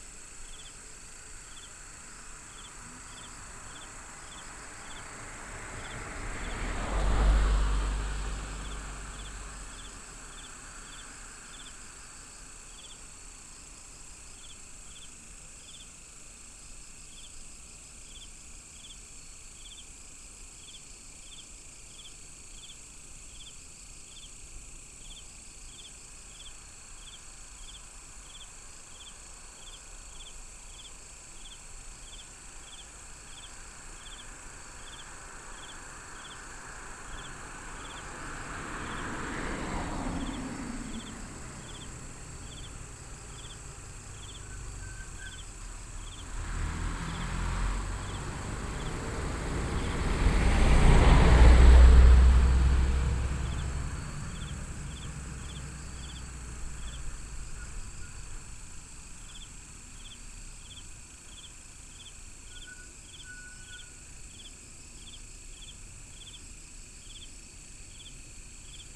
Insects, traffic sound, Binaural recordings, Sony PCM D100+ Soundman OKM II